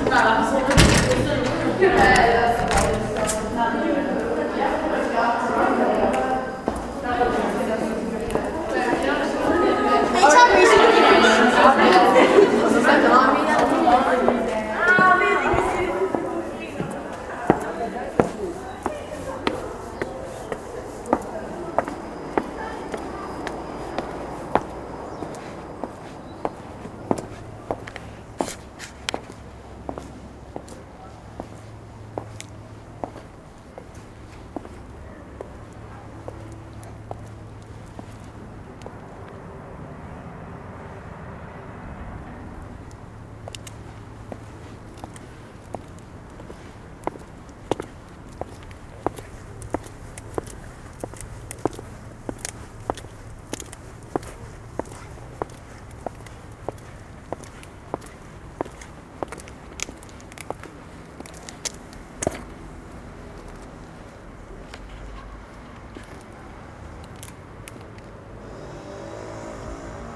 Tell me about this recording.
Alone on the funicular getting to the castle. Once arrived, I walk across a group of students and finally walk outside with a view on the valley